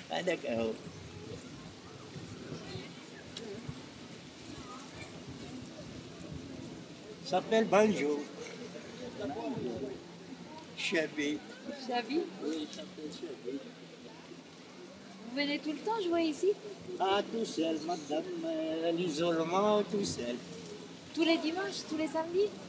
Les Riaux, Marseille, Francia - Tar Song
A man play traditional algerian music with a banjo in front of the sea.
Recorded with a Neumann Km184 at Estaque Plage, Marseille.
Marseille, France